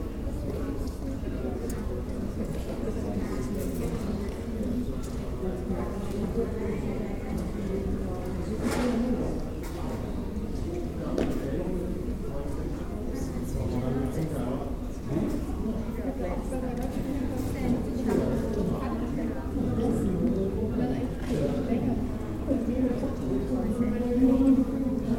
Castello, Venezia, Italien - campo ruga
campo ruga, castello, venezia